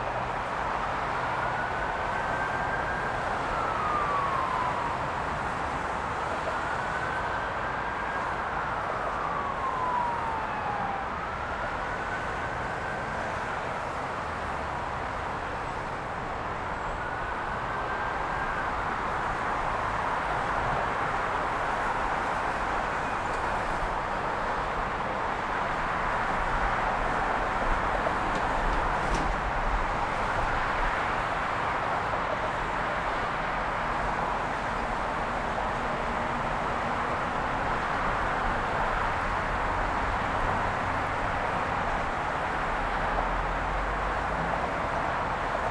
Husův kámen, Jižní spojka, Praha, Czechia - Friday Afternoon traffic on the Prague Ring Bypass
From the high vantage point of Husův Kamen (near Slatiny), I record the oceanic roar of the late Friday afternoon traffic on the main urban ring bypass (městský okruh) of Prague.